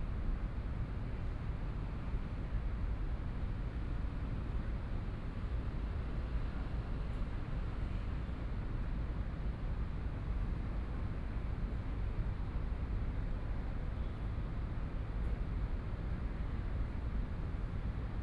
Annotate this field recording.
The park at night, Traffic Sound, Environmental sounds, Please turn up the volume a little, Binaural recordings, Sony PCM D100 + Soundman OKM II